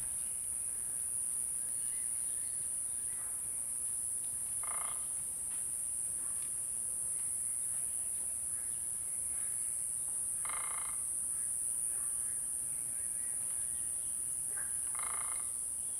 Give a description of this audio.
Bird andTraffic Sound, Zoom H2n MS+XY